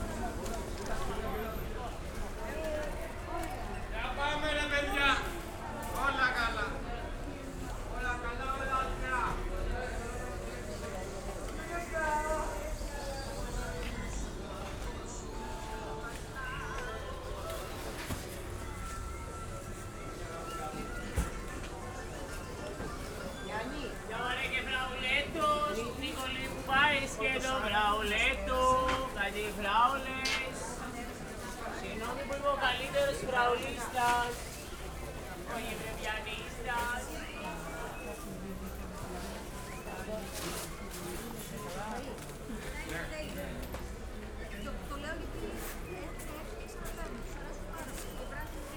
Kallidromiou street, Athen - market day, walking
market day at Kallidromiou street, a friendly place, fruit and food sellers sind sometimes and communicate accross their stands. Short walk along the market course.
(Sony PCM D50, DPA4060)
9 April, ~10:00